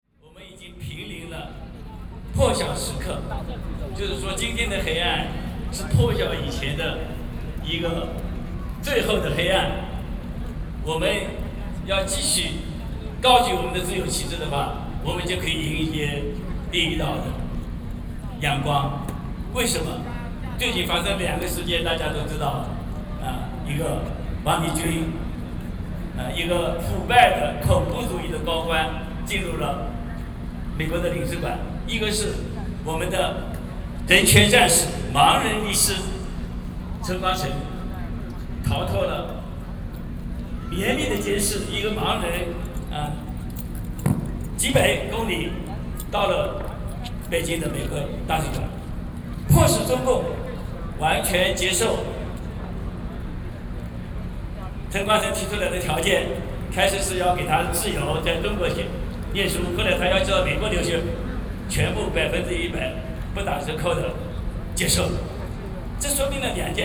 National Chiang Kai-shek Memorial Hall, Taipei - speech
Commemorate the Tiananmen Incident., Sony PCM D50 + Soundman OKM II
4 June, ~19:00